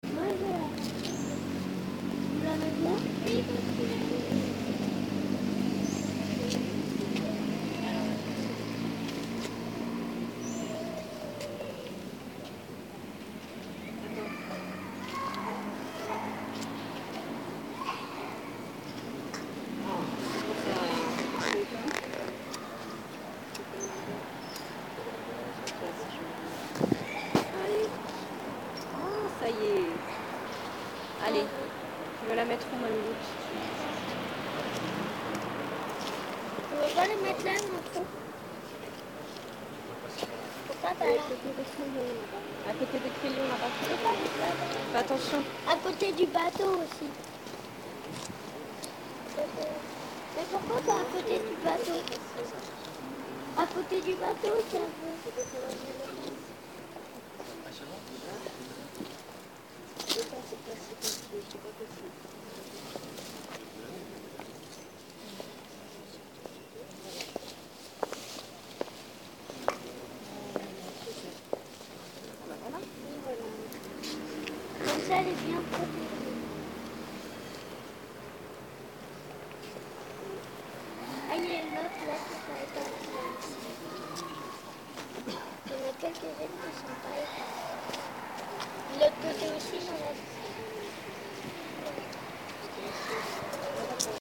Je suis Charlie, Paris, France - Charlie Hebdo
Des enfants déposent des fleurs sur le mémorial improvisé de l'attentat islamiste contre le journal satirique Charlie Hebdo où 12 personnes furent assassinées le 7 janvier 2015.
March 2015